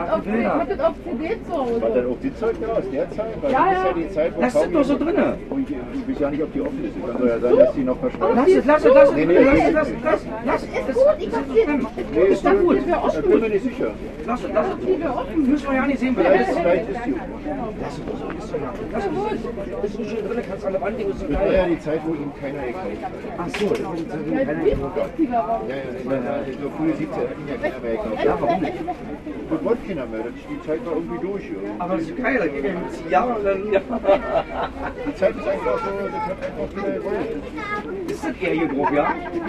Flohmarkt, Mauerpark, Plattenhändler - Fohmarkt, Mauerpark, Plattenhändler
Berlin, Germany